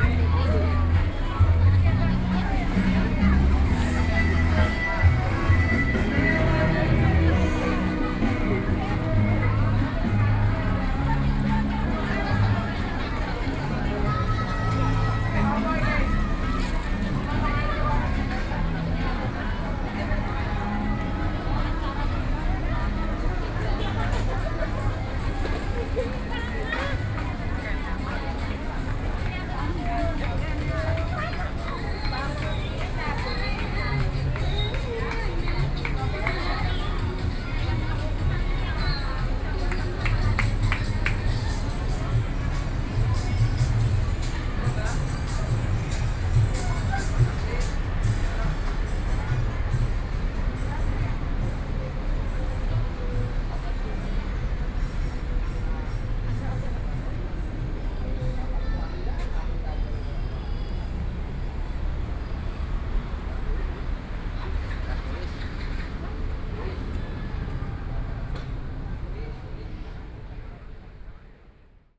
Taipei, Taiwan - soundwalk
Southeast Asian labor holiday gathering shops and streets, Sony PCM D50 + Soundman OKM II